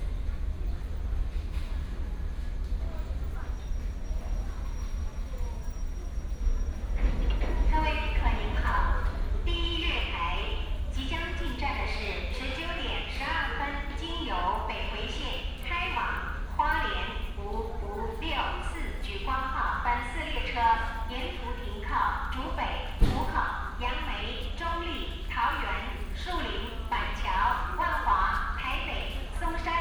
in the station platform, Station information broadcast